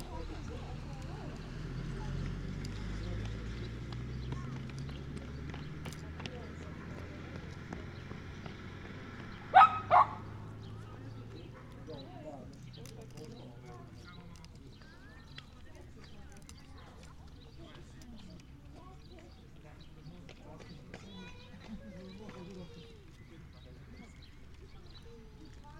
Dubingiai, Lithuania, crossroads (quarantine days)
the crossroad of little Lithuanian historical town...life is going on.